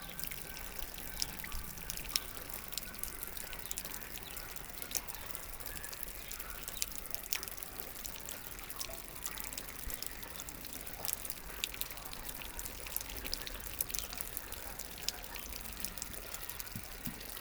Pothières, France - Silo
Near a big wheat silo, rain is trickling from a metallic wall. Just near, some small dogs are barking at every fly taking flight. Sparrows are singing.